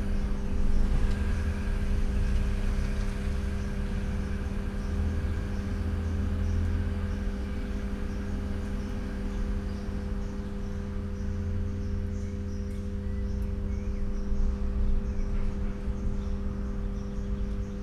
Maribor, Nova vas, car park - electric buzz
electric buzz from a switch box
(SD702 DPA4060)
Maribor, Slovenia, 31 May 2012